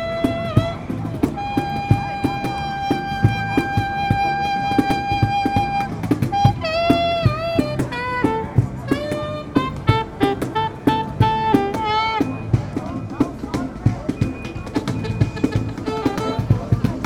Calçadão de Londrina: Músicos de rua: saxofonista e cajonero - Músicos de rua: saxofonista e cajonero / Street musicians: saxophonist and drawer
Panorama sonoro: Dupla de músicos tocando saxofone e cajon nas proximidades da Praça Marechal Floriano Peixoto. Passagem de um automóvel de propaganda volante anunciando ofertas de lojas e um vendedor informal de café apregoando seu produto. Algumas pessoas em volta acompanhavam a apresentação. Sound panorama: Double of musicians playing saxophone and cajon in the vicinity of Marechal Floriano Peixoto Square. Passage of a flying advertisement automobile announcing offers of stores and an informal coffee vendor hawking its product. Some people around came with the presentation.